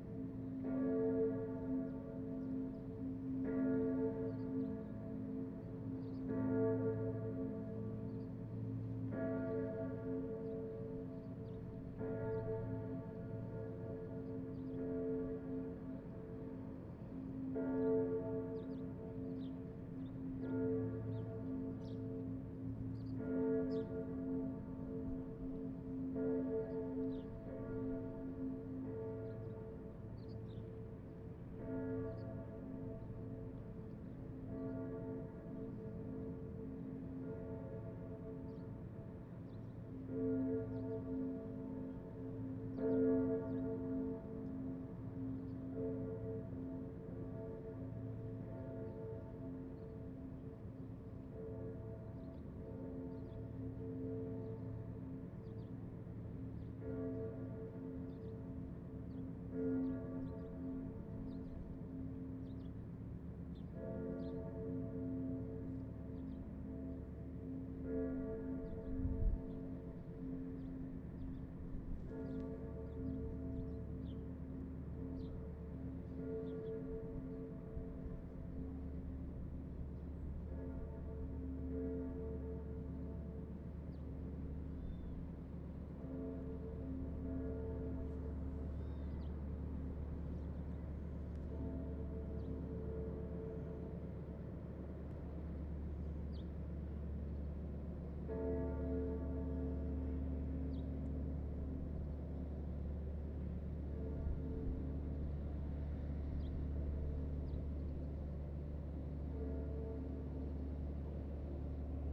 amb enregistrée au zoom H2 24/01/2010 port de marseille 10 heure
Marseille, France